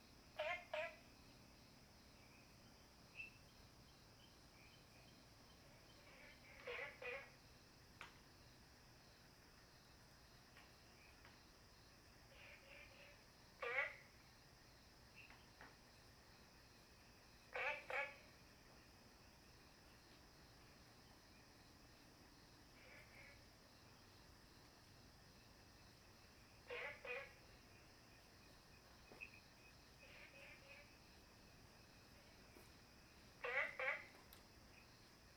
Frogs chirping, at the Hostel
Zoom H2n MS+XY